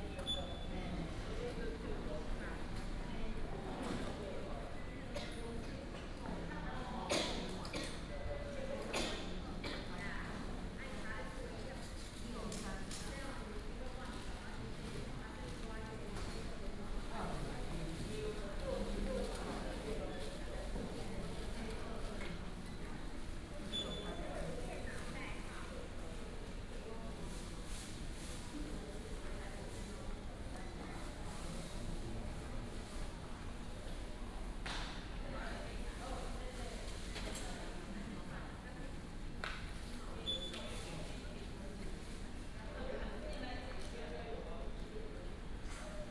Zhunan Station, Miaoli County - In the station hall
In the station hall